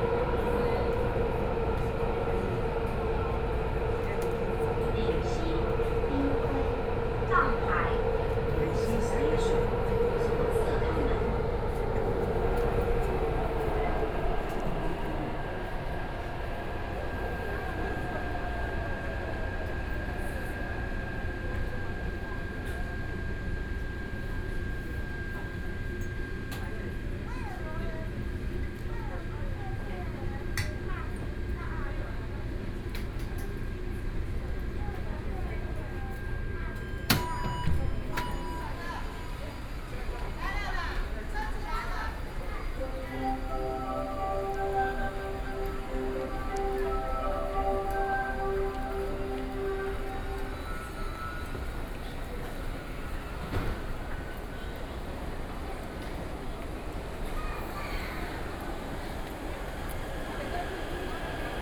Taipei, Taiwan - MRT trains
inside the MRT train, from Guting to Dingxi, Sony PCM D50 + Soundman OKM II